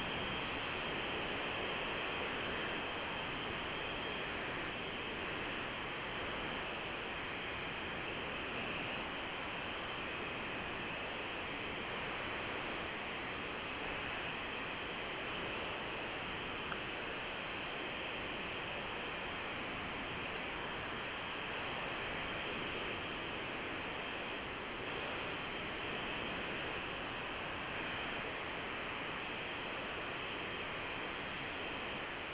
{"title": "Calling the Glacier: Vernagtferner - eiswasser in echtzeit 02.12.2007 18:39:16", "latitude": "46.87", "longitude": "10.81", "altitude": "2984", "timezone": "GMT+1"}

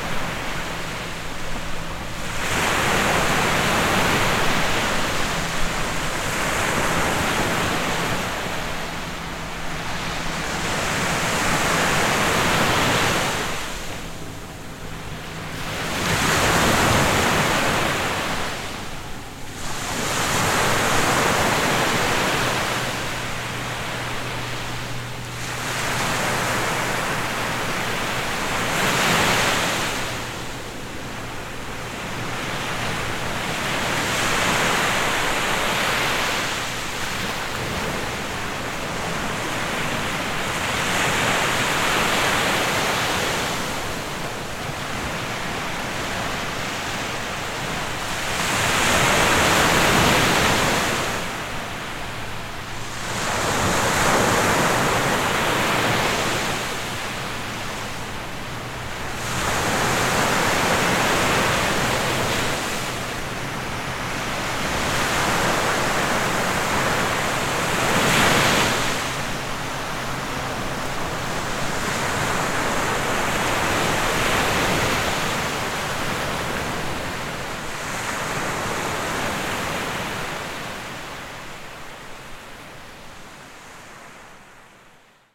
{"title": "Gruissan, France - ambience of the beach in winter", "date": "2021-12-25 16:00:00", "description": "ambience of the beach in winter\nCaptation : Zoom H6", "latitude": "43.10", "longitude": "3.12", "timezone": "Europe/Paris"}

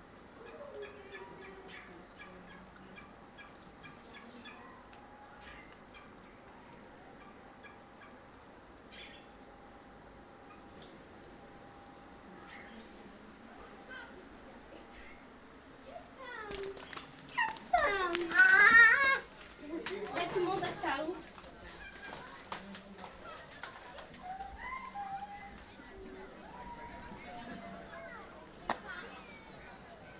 {"title": "Blijdorp, Rotterdam, Nederland - A walk through the zoo", "date": "2016-07-22 16:30:00", "description": "It was way too crowded. And it was too hot for the animals to do anything at all. So I thought it was a good idea to record the visitors around me while walking through the zoo.\nHet was te druk. En het was te heet voor de dieren om ook maar iets te doen. Het leek me een goed om dan maar de bezoekers op te nemen terwijl ik door het park liep.\nBinaural recording", "latitude": "51.93", "longitude": "4.45", "altitude": "1", "timezone": "GMT+1"}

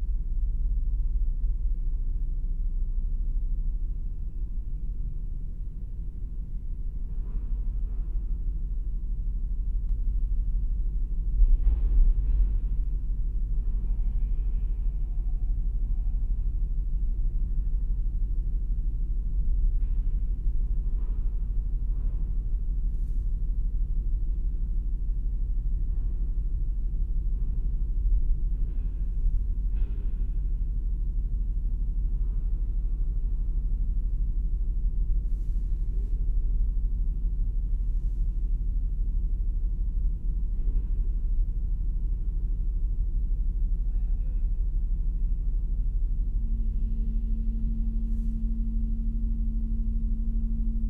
{"title": "Tarbert, UK - ferry ... boarding ...", "date": "2018-05-19 06:30:00", "description": "Boarding the Kennecraig to Port Ellen ferry to Islay ... lavalier mics clipped to baseball cap ...", "latitude": "55.81", "longitude": "-5.48", "altitude": "1", "timezone": "Europe/London"}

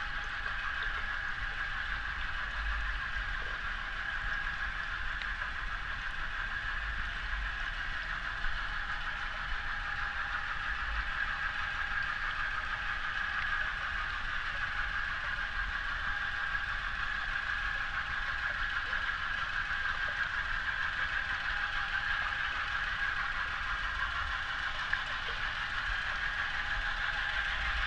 {"title": "Kaliningrad, Russia, underwater recording of a boat passing by", "date": "2019-06-07 18:40:00", "description": "hydrophone recording. the boar is passing by...", "latitude": "54.71", "longitude": "20.51", "altitude": "1", "timezone": "Europe/Kaliningrad"}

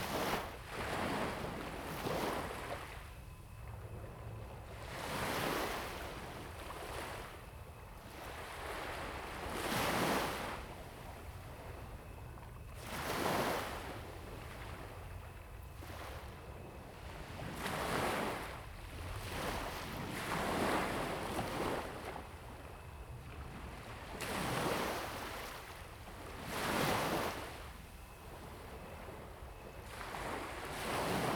{"title": "Jinning Township, Kinmen County - the waves", "date": "2014-11-03 19:07:00", "description": "Sound of the waves\nZoom H2n MS+XY", "latitude": "24.44", "longitude": "118.31", "altitude": "6", "timezone": "Asia/Taipei"}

